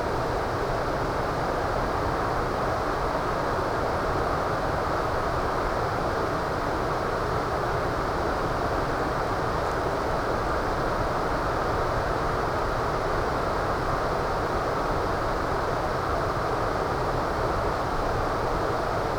Austad, Bygland, Norway - WLD setesdal valley by night
recorded close to midnight from a terrace overlooking the setesdal valley - heard are wind, a waterfall (about 1km away, but fully visible) and very occasionally a passing bird.